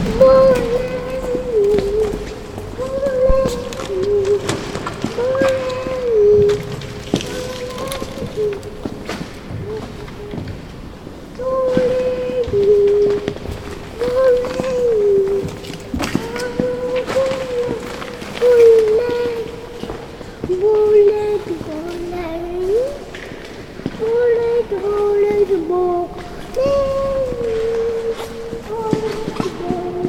saint Jijli church, Husova street
singing in the church
Prague 1-Old Town, Czech Republic, 4 November 2011, 16:45